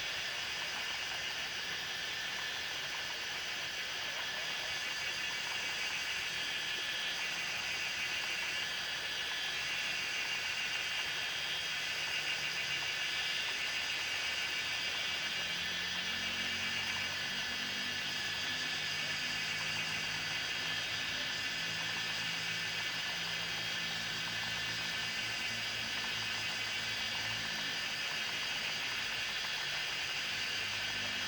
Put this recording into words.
Cicada sounds, Frogs chirping, Zoom H2n MS+XY